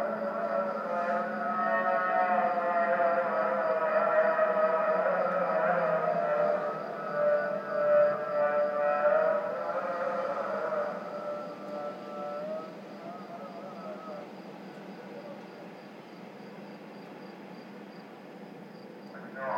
Marina Göcek, Turkey - 912 Muezzin call to prayer (evening)
Recording of a morning prayer call made from a boat.
AB stereo recording (17cm) made with Sennheiser MKH 8020 on Sound Devices MixPre-6 II.